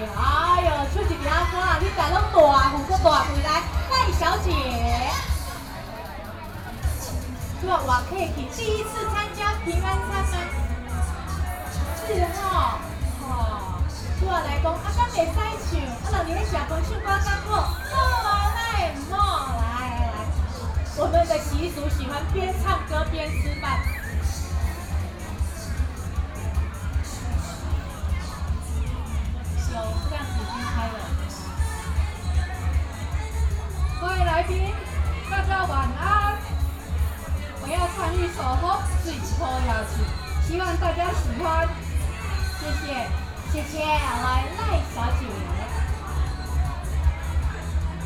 豐年公園, Beitou, Taipei City - Community party
Community party, Sony PCM D50 + Soundman OKM II